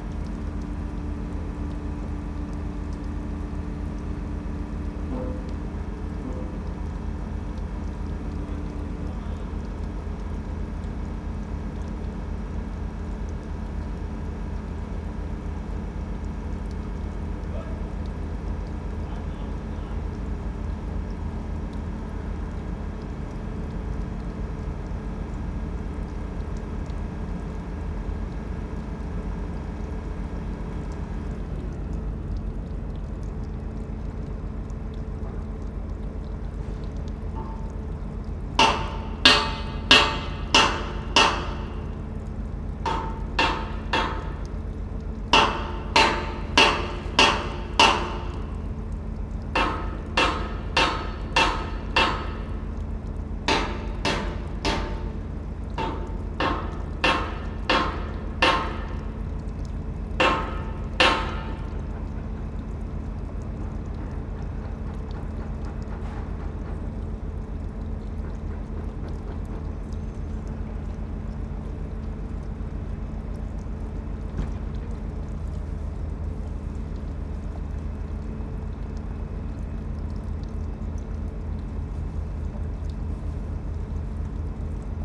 water drips from a hydrant, positioning of a steel girder by using an excavator, some welding
the city, the country & me: november 1, 2013